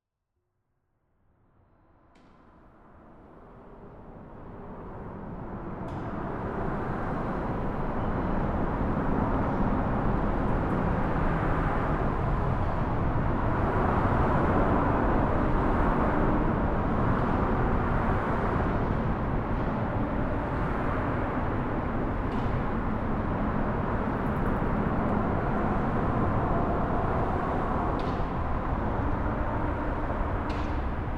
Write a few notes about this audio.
Quick recording underneath the bridge!